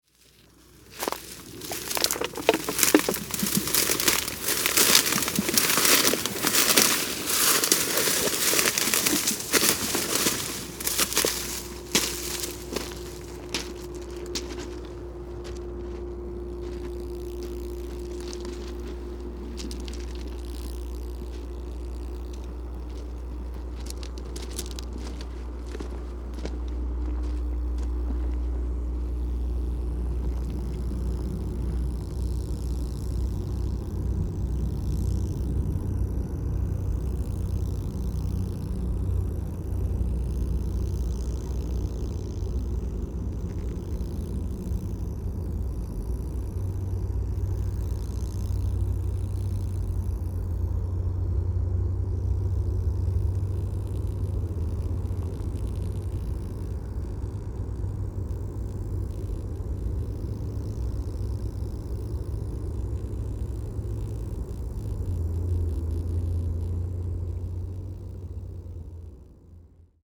railway line, Staten Island
footsteps in reeds and ice, then crackling power lines